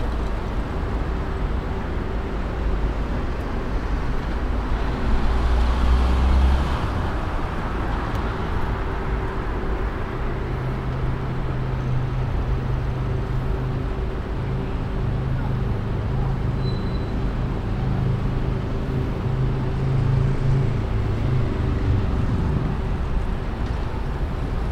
Antwerpen, Belgium - Late afternoon traffic
Traffic on the Frankrijklei; everyone heading home after work.